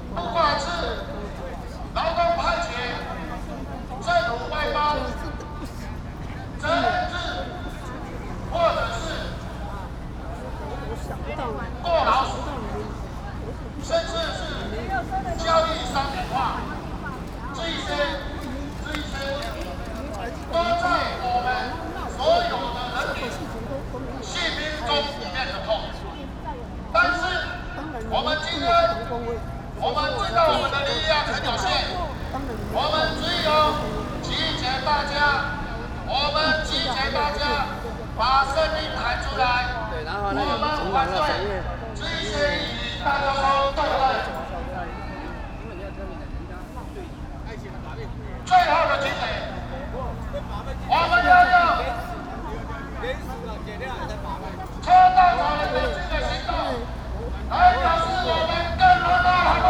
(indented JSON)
{
  "title": "Control Yuan, Taipei - labor protests",
  "date": "2012-05-01 15:20:00",
  "description": "labor protests, Sony PCM D50 + Soundman OKM II",
  "latitude": "25.05",
  "longitude": "121.52",
  "altitude": "15",
  "timezone": "Asia/Taipei"
}